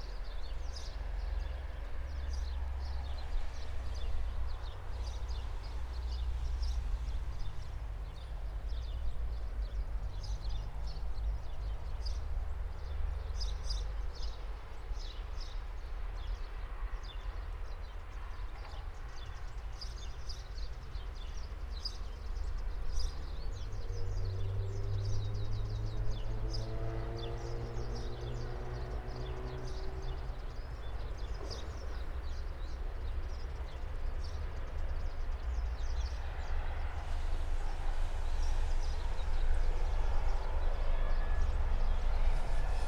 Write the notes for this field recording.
Nature is rare in Malta, trees too. The land is used and utilized almost everywhere. While travelling with the bus, I've looked into that valley called Victoria Garden, from above, and I was curious about what to hear... (SD702, DPA4060)